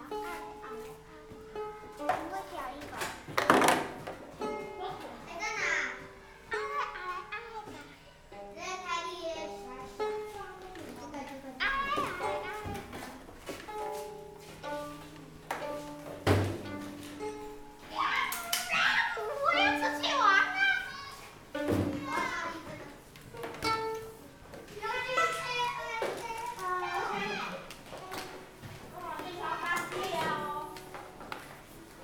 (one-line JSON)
{"title": "Houliao Elementary School, Fangyuan Township - Tuning", "date": "2014-01-06 18:20:00", "description": "Pupils are for violin tuning, Zoom H6", "latitude": "23.92", "longitude": "120.34", "altitude": "8", "timezone": "Asia/Taipei"}